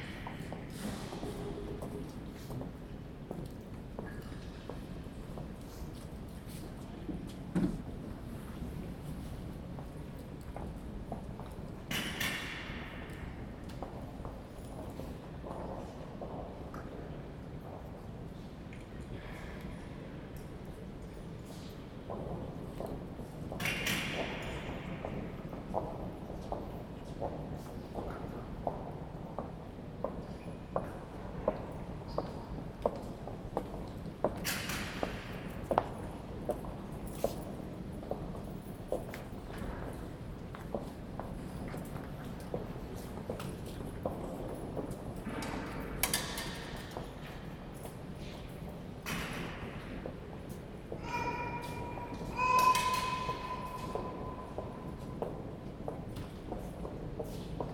Dom, Altstadt-Nord, Köln, Deutschland - Im Kölner Dom | in the Cologne Cathedral
im Dom an einem Teelichfeld, ab und an fallen Münzen in einen Opferstock, Teelicher fallen herunter, abgebrannte Lichter werde beräumt und neue aufgestellt, ein Baby quengelt | in the cathedral beside a field of candle lights, sometimes coins falling in a offertory box, candle lights falling down, burndt down lights are put away and set up new, a baby whines
Deutschland, European Union, 25 June 2013, ~19:00